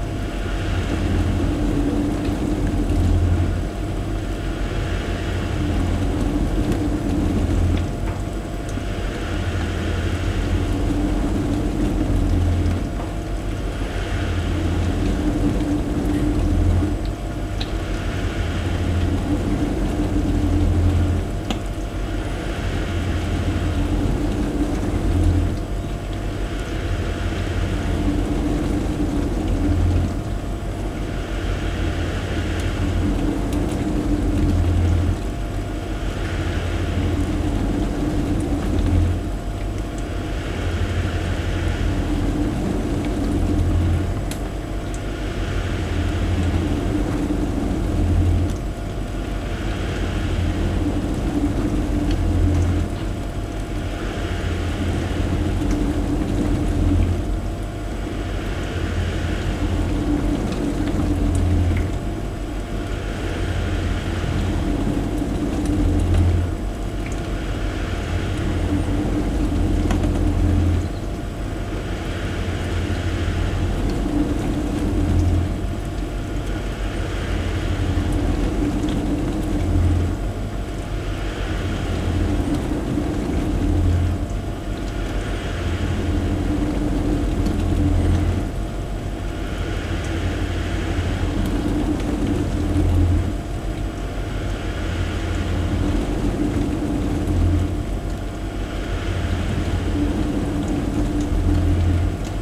Poznan, Mateckiego street, kitchen - dishwasher
dishwasher doing its cycles. At the end of every 'beat' the machine makes a low frequency thump. The recorder mics were not able to pick it up but when you are in the room it really puts pressure on your ears. (sony d50)